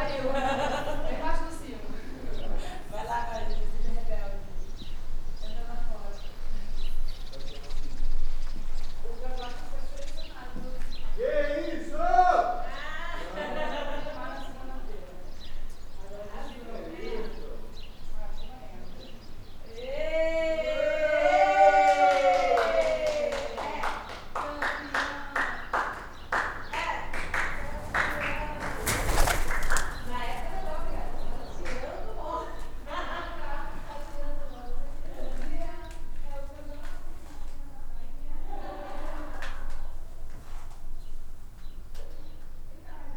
Convento de S.Francisco Carreira de S.Francisco 7O5O-16O Montemor-o-Novo - Convento
Montemor-o-Novo, Portugal, 21 June